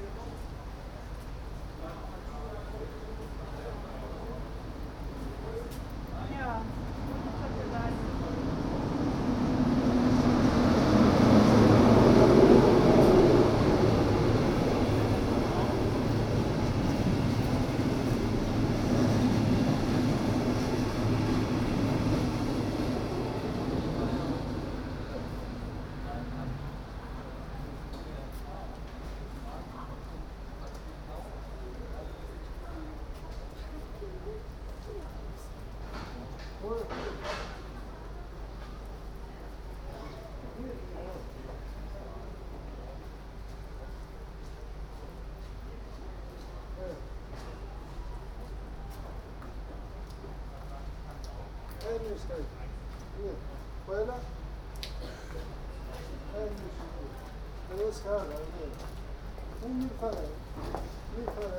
S+U Frankfurter Allee, Möllendorffstraße, Berlin, Deutschland - Frankfurter AlleeS-Bahn Station
For my multi-channel work "Ringspiel", a sound piece about the Ringbahn in Berlin in 2012, I recorded all Ringbahn stations with a Soundfield Mic. What you hear is the station Frankfurter Allee on an afternoon in June 2012.
20 June 2012, ~16:00